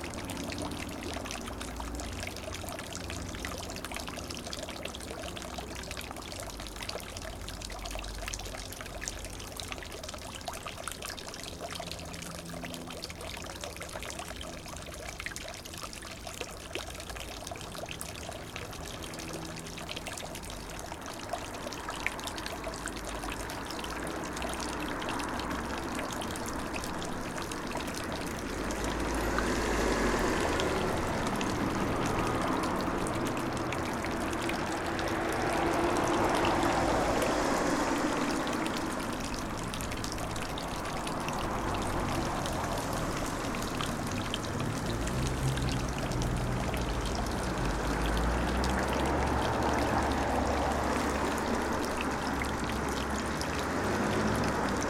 zürich 8 - zolliker-/ecke altenhofstrasse, brunnen
zolliker-/ecke altenhof-strasse